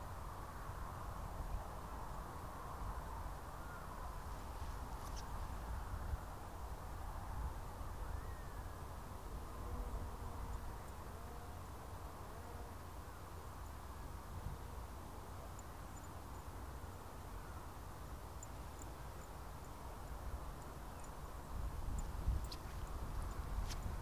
Figsbury Ring, Firsdown, UK - 038 Birds, aircraft, dogs
7 February 2017